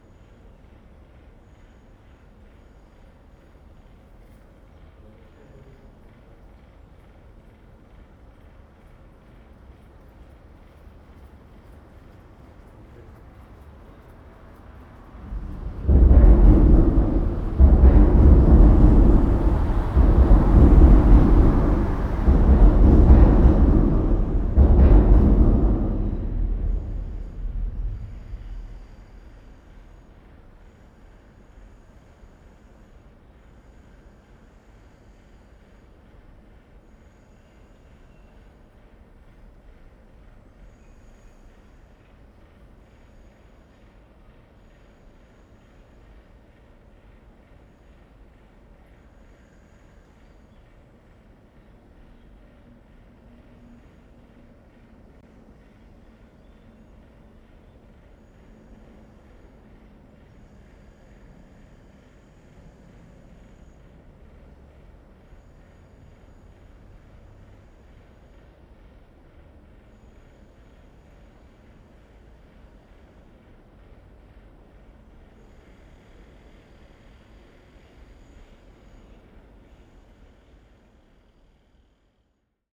pump, drilling, cars and loud train recorded under railway bridge. Soundfield Mic (ORTF decode from Bformat) Binckhorst Mapping Project